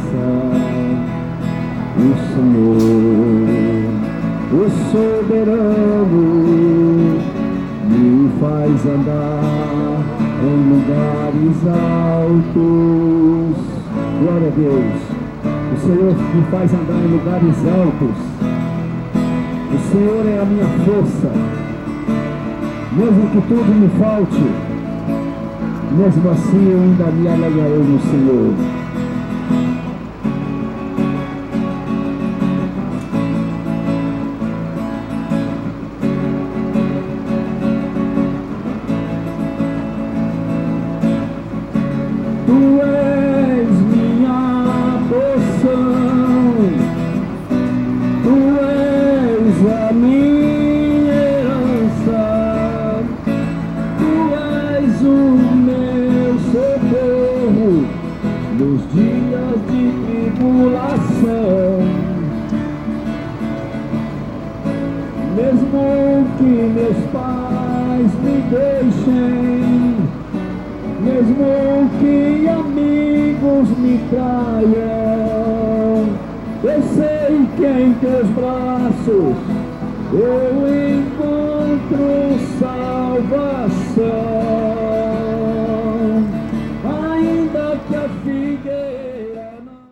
Panorama sonoro: dupla de evangelizadores, sábado à tarde, no Calçadão nas proximidades da Praça Marechal Floriano Peixoto. Enquanto um músico tocava e cantava músicas de louvor, outro distribuía panfletos e abençoava pedestres. O músico utilizava violão e um microfone conectados a uma caixa de som. Algumas pessoas se sentavam próximas à dupla e cantavam junto com os evangelizadores.
A pair of evangelizers, Saturday afternoon, on the boardwalk near the Marechal Floriano Peixoto Square. While one musician played and sang songs of praise, another distributed pamphlets and blessed pedestrians. The musician used a guitar and a microphone connected to a sound box. Some people sat next to the pair and sang together with the evangelizers.